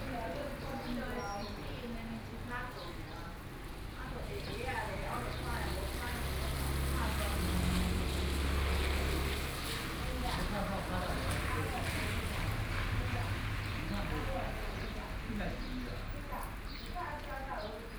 A group of people chatting, Funeral, Rainy Day, Small village, Traffic Sound, Birdsong
Sony PCM D50+ Soundman OKM II
Yilan County, Taiwan